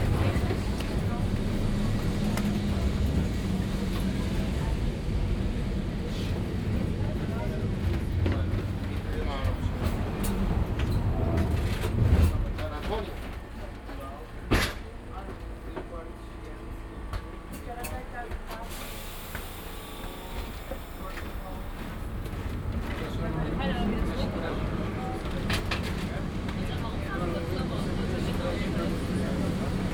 Lisbon, Electrico - sound drive
ambience in tram electrico nr.28 while driving through the city. binaural, use headphones
Lisbon, Portugal, July 2010